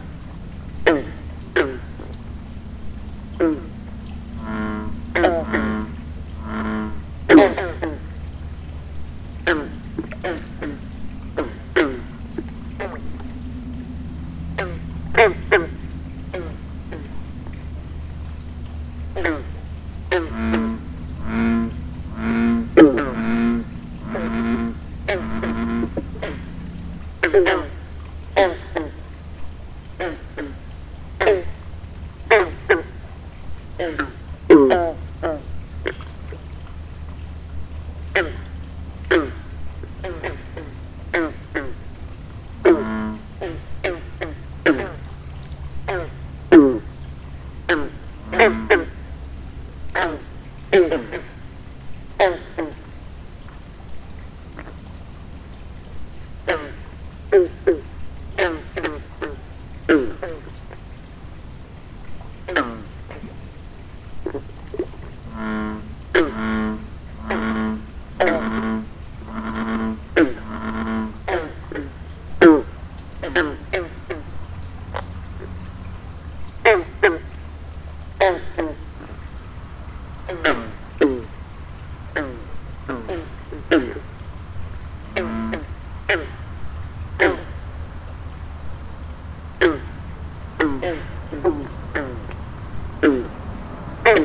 Cornwall, VT, USA - Frog pond
Late evening bullfrogs around a large landscaped pond.